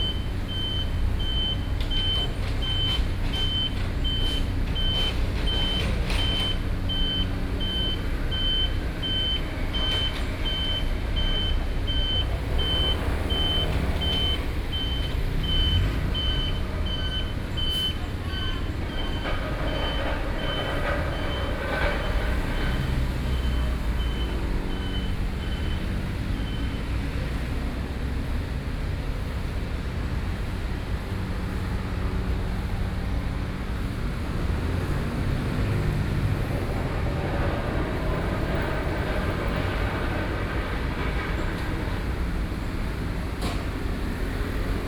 Road construction, Sony PCM D50 + Soundman OKM II
Taipei City, Taiwan